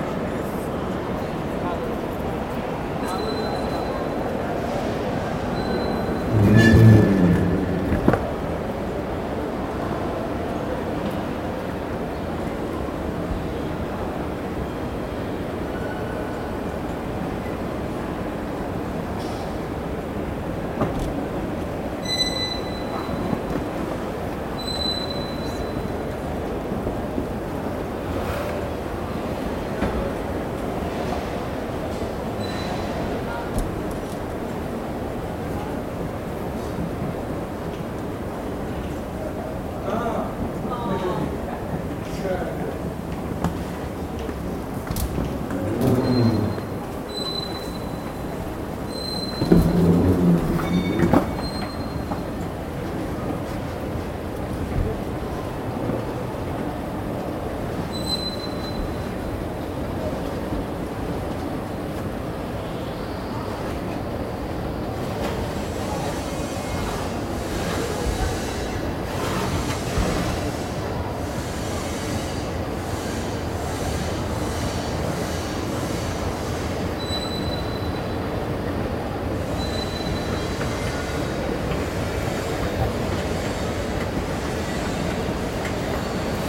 zurich main station, hall
recorded june 16, 2008. - project: "hasenbrot - a private sound diary"
Zurich, Switzerland